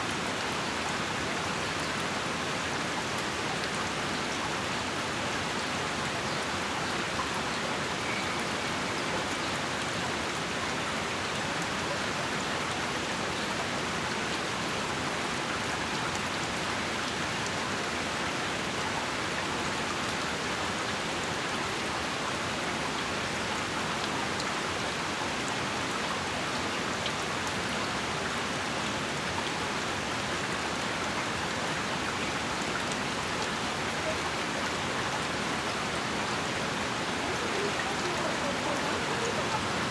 Greenacre Park, E 51st St, New York, NY, USA - Waterfall in Midtown
Sounds from the waterfall part of Greenacre Park, Manhattan. Recorded at the entrance of the park.
United States, 5 April 2022, 15:59